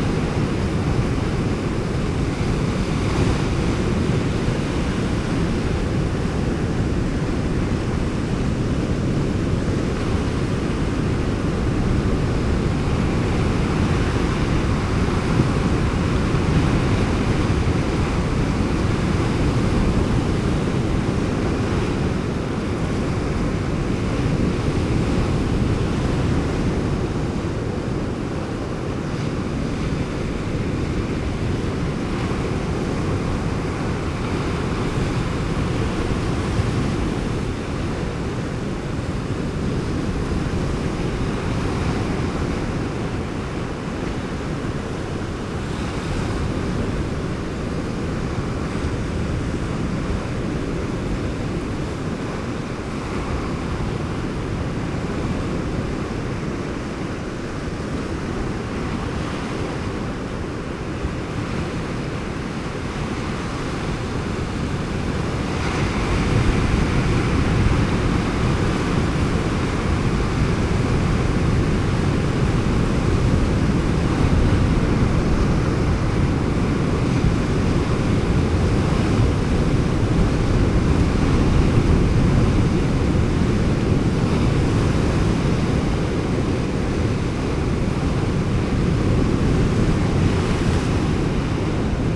Novigrad, Croatia - roaring sea
blue, dark night, white seahorses riding ...